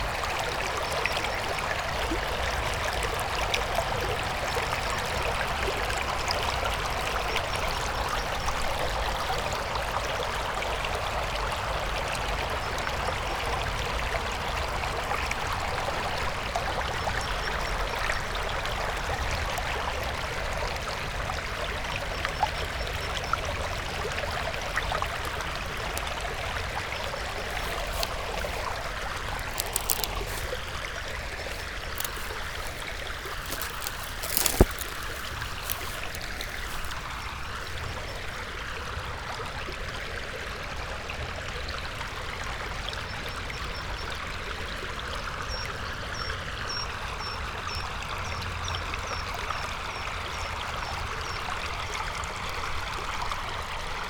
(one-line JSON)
{"title": "old part of river drava, melje - walk along the stream", "date": "2014-03-16 11:23:00", "latitude": "46.55", "longitude": "15.69", "timezone": "Europe/Ljubljana"}